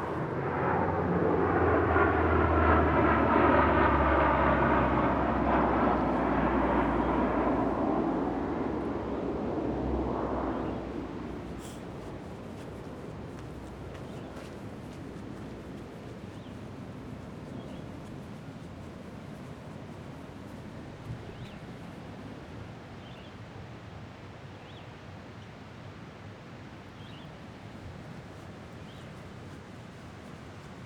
Walking around Nimrod Dam. I walk from the parking lot to the center of the dam and peer over the outflow side. Then I walk over to the inflow side of the dam and finally I walk off of the dam and sit on a bench on the outflow side of the dam. A C-130 makes a low pass early in the recording.